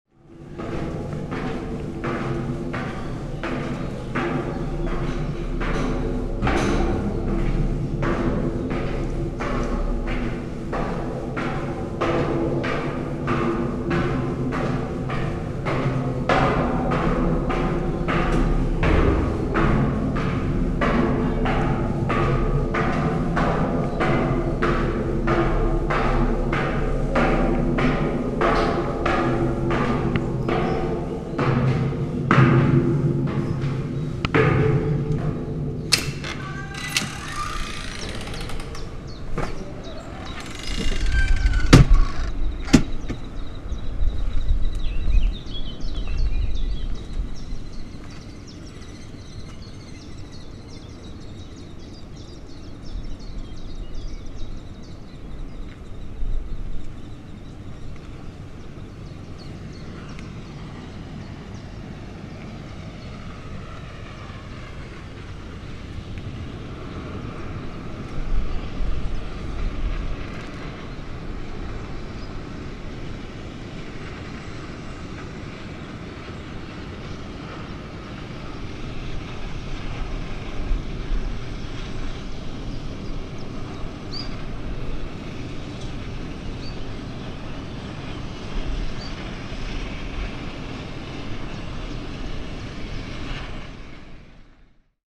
Bismarck & Microwave Towers
Bismarkturm, Microwave tower, overlook, viewing platform, hill, monument, telecommunications, DDR, Background Listening Post
13 July 2010, 13:09